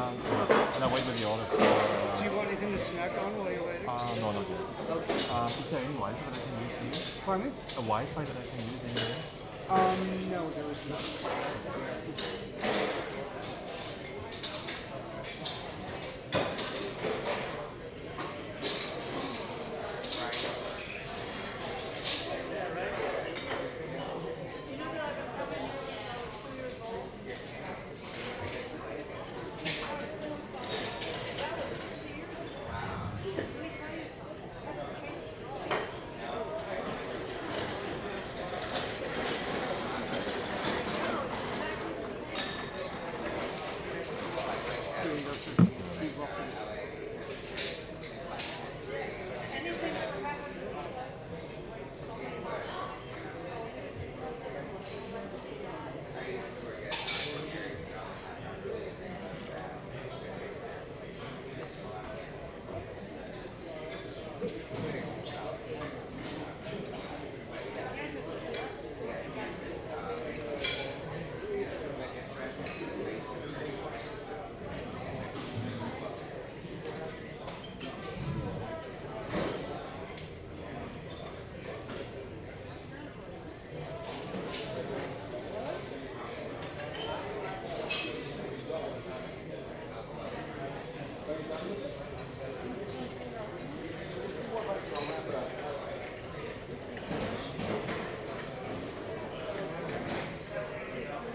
Central LA, Los Angeles, Kalifornien, USA - canter's deli
canter's deli, late lunch time. customers, cutlery and dishes..
22 January, 14:00